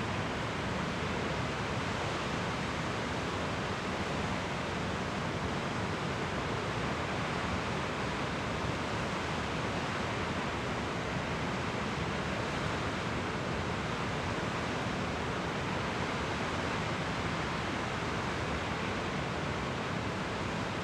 Nimrod Dam - Walking around Nimrod Dam
Walking around Nimrod Dam. I walk from the parking lot to the center of the dam and peer over the outflow side. Then I walk over to the inflow side of the dam and finally I walk off of the dam and sit on a bench on the outflow side of the dam. A C-130 makes a low pass early in the recording.
14 April, ~14:00